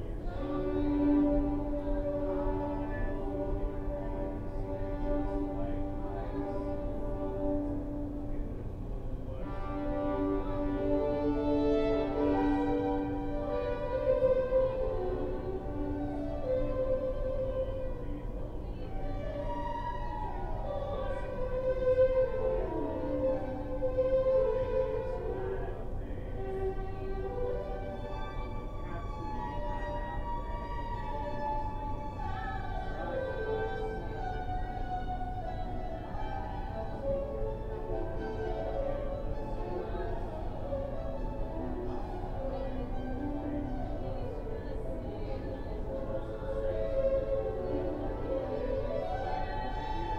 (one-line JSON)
{"title": "Muhlenberg College Hillel, West Chew Street, Allentown, PA, USA - Center for The Arts Stairwell", "date": "2014-12-09 11:05:00", "description": "While sitting in a stairwell in the Center for The Arts I was able to hear, simultaneously, a violinist practicing in the main foyer, and two vocalists practicing a holiday song in a small, secluded room. In the midst of the recording a man runs up the starts quite rhythmically.", "latitude": "40.60", "longitude": "-75.51", "altitude": "111", "timezone": "America/New_York"}